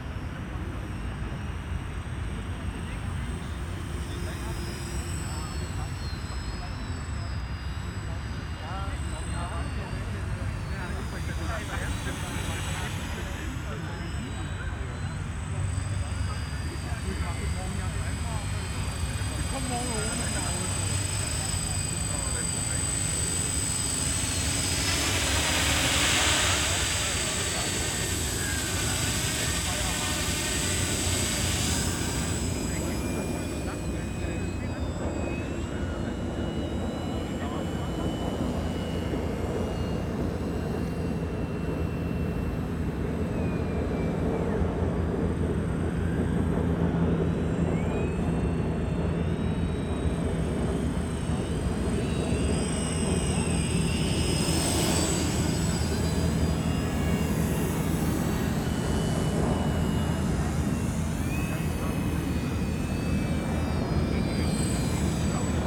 Tempelhofer Feld, Berlin, Deutschland - model planes practicing
enthusiasts with different kinds of model planes practicing at the Tempelhof air field.
(SD702, Audio Technica BP4025)